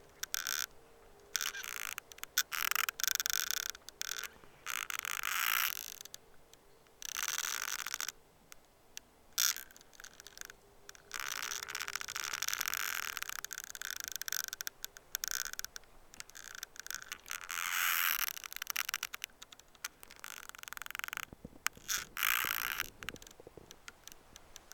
Vilnius, Lithuania, communal gardens - Creaking Tree
A beautiful sunny autumn day, close to Vilnius. Little forest close to the field. I went mushroom picking, but found only this creaking tree.
I have used a Zoom H5 recorder with stereo microphone and one piezo microphone.